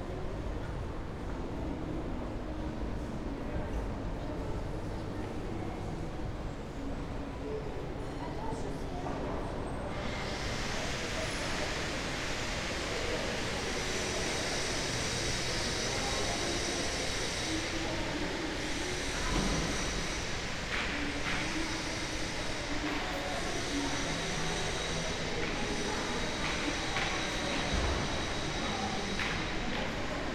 Avenue du Rock’n’Roll, Belval, Esch-sur-Alzette, Luxemburg - shopping center walk
Esch-sur-Alzette, Belval, walking in a shopping center, it has just opened, only a few people are around
(Sony PCM D50, Primo EM172)